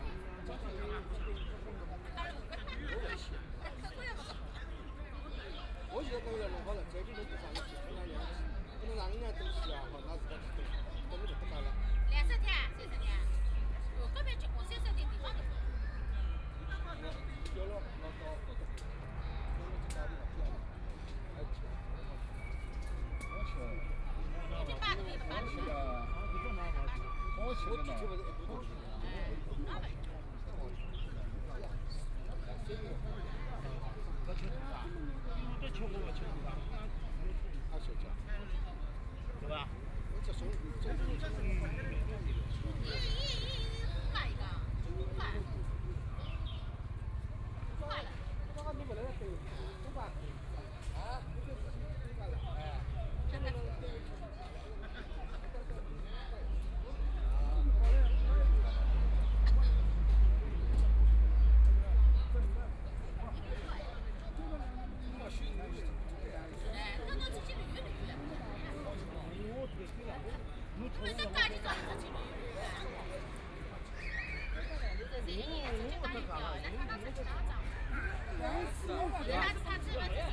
Many elderly people gathered to chat and play cards, Binaural recordings, Zoom H6+ Soundman OKM II
Shanghai, China, 2 December 2013, ~14:00